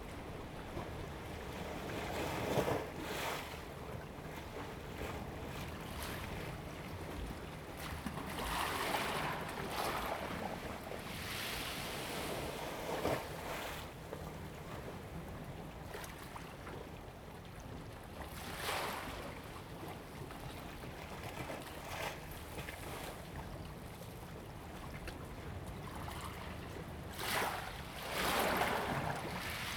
海子口漁港, Hsiao Liouciou Island - Waves and tides
Waves and tides
Zoom H2n MS +XY